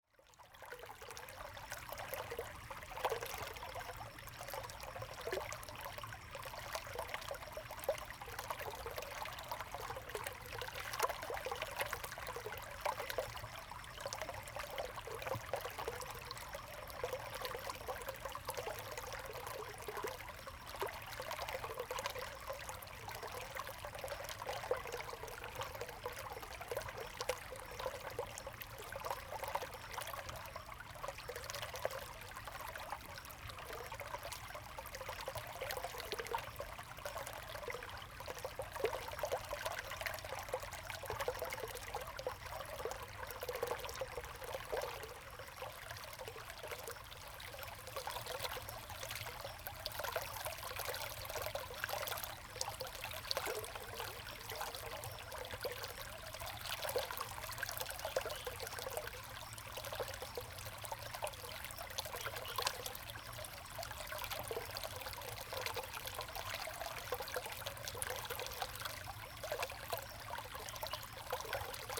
The sound of a small stream of water
Zoom H2n MS +XY
Taitung City, Taitung County, Taiwan, 4 September 2014, ~15:00